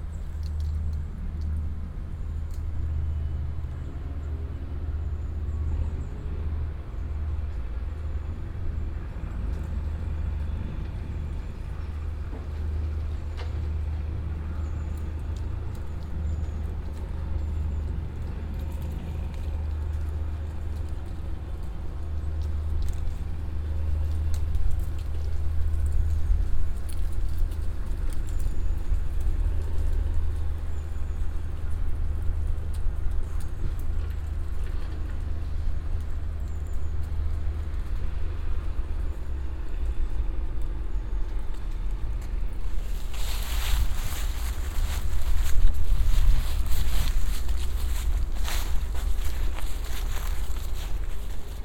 Cuenca, Cuenca, España - Soundwalking Cuenca: 2015-11-19 Soundwalk along the banks of the Júcar River, Cuenca, Spain
A soundwalk along the banks of the Júcar River, Cuenca, Spain.
Luhd binaural microphones -> Sony PCM-D100.